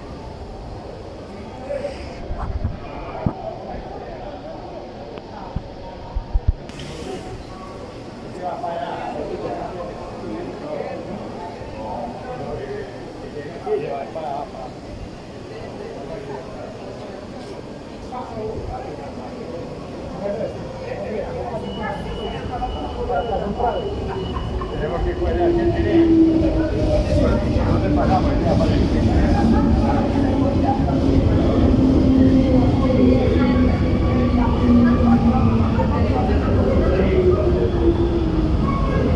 Cl., Medellín, Antioquia, Colombia - Estación metro San Antonio
Información Geoespacial
(latitud: 6.247298, longitud: -75.569717)
Estación San Antonio
Descripción
Sonido Tónico: Bulla de gente hablando
Señal Sonora: Llegada del metro
Micrófono dinámico (celular)
Altura: 1,60 cm
Duración: 2:50
Luis Miguel Henao
Daniel Zuluaga